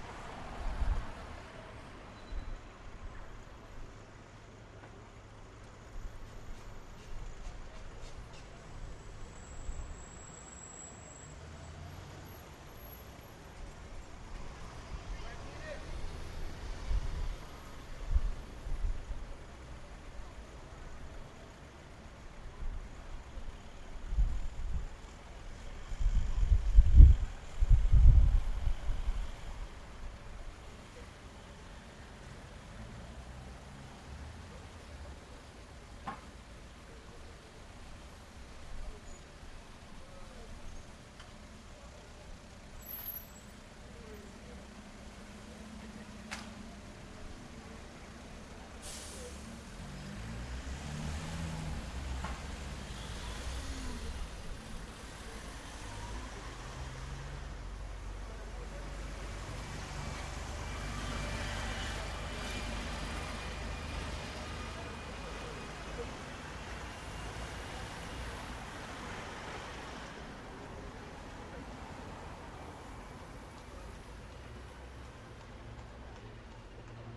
Sunday afternoon, recorded from the window of my flat.
Co. Dublin City, Ireland, 18 July 2010, 16:00